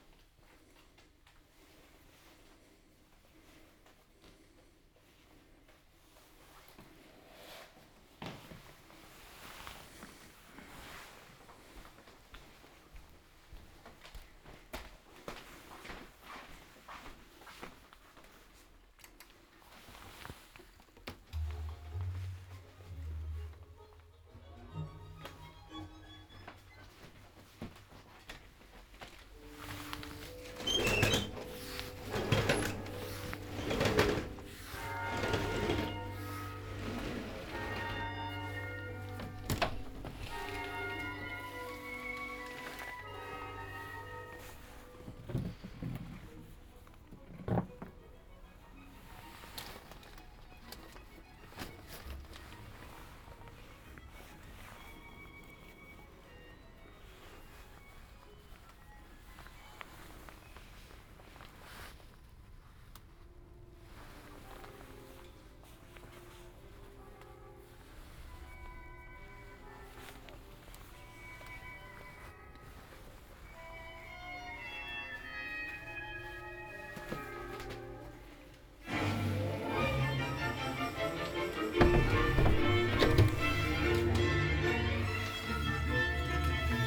"Evening return home with break in the time of COVID19" Soundwalk
Chapter CIX of Ascolto il tuo cuore, città. I listen to your heart, city
Thursday, June 18th 2020. Back San Salvario district, through Porta Susa and Porta Nuova railway station one one hundred days after (but day forty-six of Phase II and day thirty-three of Phase IIB and day twenty-seven of Phase IIC and day 4st of Phase III) of emergency disposition due to the epidemic of COVID19.
Start at 11:03 p.m. end at 11:58 p.m. duration of recording 55’37”
As binaural recording is suggested headphones listening.
Both paths are associated with synchronized GPS track recorded in the (kmz, kml, gpx) files downloadable here:
Go to similar path n.47 “"Morning AR with break in the time of COVID19" Soundwalk